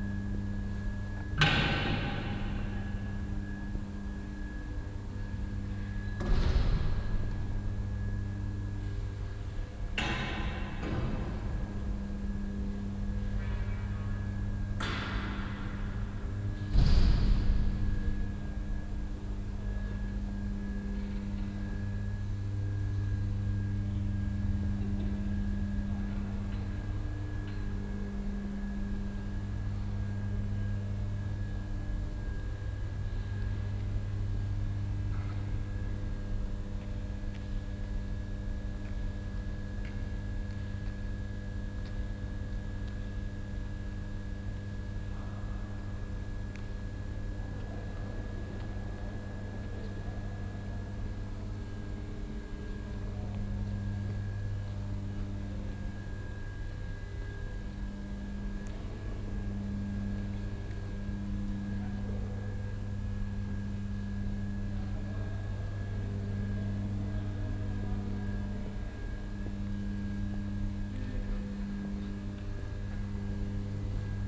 duisburg, am schürmannshof, zeche, kraftzentrale
in der kraftzentrale auf dem duisburger zechengelände, riesige, leere industriehalle, zwei arbeiter beschäftigen sich mit einem 70er jahre fahrzeug, das brummen der hmi lampen, schritte
soundmap nrw
social ambiences/ listen to the people - in & outdoor nearfield recordings